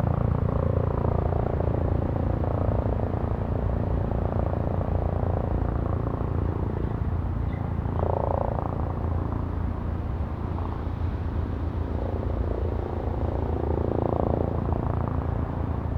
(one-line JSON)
{"title": "berlin, tempelhofer feld: rollweg nahe a - the city, the country & me: taxiway close to freeway a 100", "date": "2010-05-08 16:35:00", "description": "taxiway close to suburban railway and freeway a 100, police helicopter monitoring the area, sound of the freeway, suburban train passes by\nthe city, the country & me: may 8, 2010", "latitude": "52.47", "longitude": "13.39", "altitude": "51", "timezone": "Europe/Berlin"}